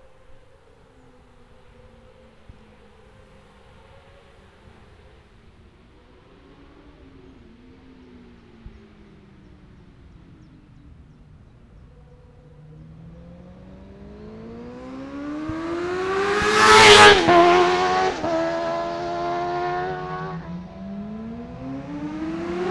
Scarborough, UK - motorcycle road racing 2012 ...
600cc practice ... Ian Watson Spring Cup ... Olivers Mount ... Scarborough ... binaural dummy head ... comes out the wrong way round and a bit loud ... grey breezy day ...